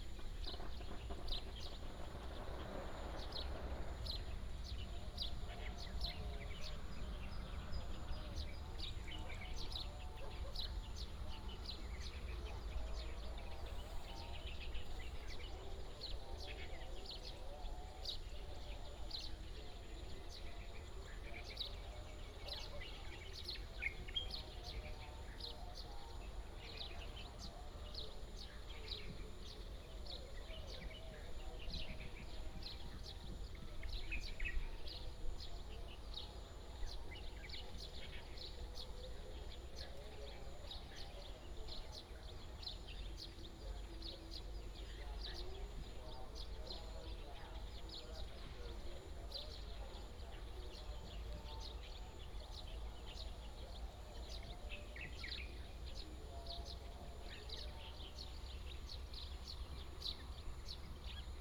大尖山, Dashan, Shuilin Township - Small rural
Morning in the farmland, Small rural, Village Message Broadcast Sound, Bird sound
Yunlin County, Shuilin Township, 雲154鄉道, 8 May 2018